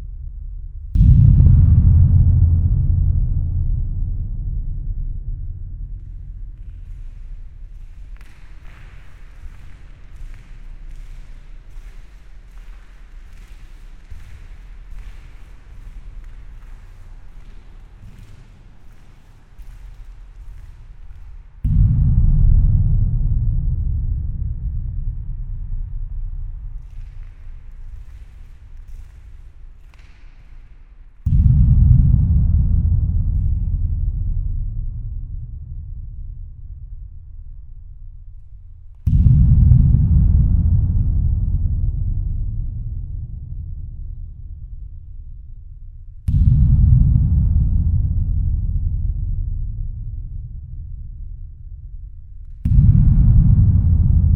Neufchâteau, Belgique - Cistern
Two cisterns lie near the road. These two dead objects are for sale. One is open, it's possible to enter in.
My friends let me 30 seconds to record something, so I botched a recording. This is a John Grzinich like sounding object. I will urgently go back inside.